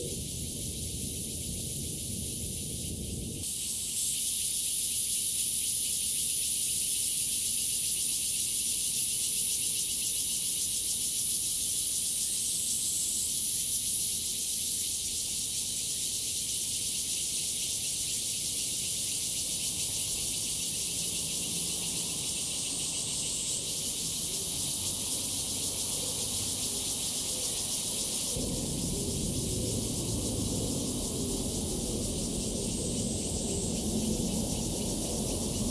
545台灣南投縣埔里鎮暨南大學, NCNU Puli, Taiwan - Cicadas chirping and bird calls
Cicadas chirping and bird calls at the campus of National Chi Nan University.
Device: Zoom H2n